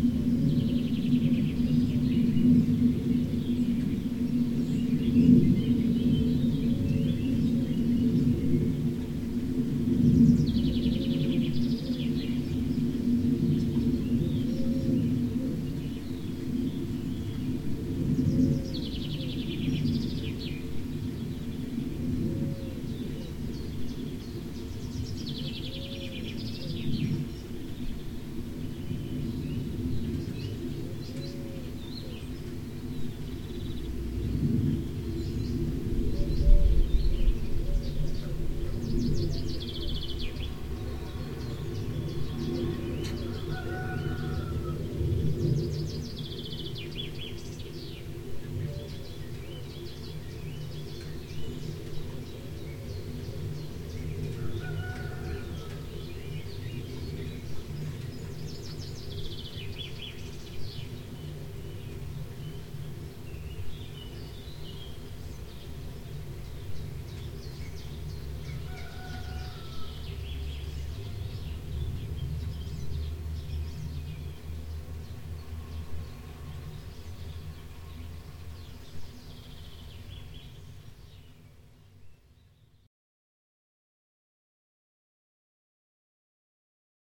Hvězda early spring morning
at 5 AM in the park Hvezda, with birds and distant airplane